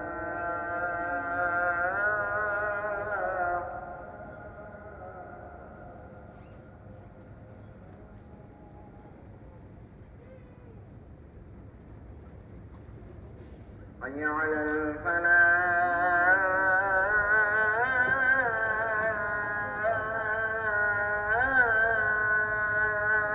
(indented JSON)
{
  "title": "Marina Kalkan, Turkey - 915c Muezzin call to prayer (early morning)",
  "date": "2022-09-22 05:45:00",
  "description": "Recording of an early morning call to prayer\nAB stereo recording (17cm) made with Sennheiser MKH 8020 on Sound Devices MixPre-6 II.",
  "latitude": "36.26",
  "longitude": "29.41",
  "altitude": "6",
  "timezone": "Europe/Istanbul"
}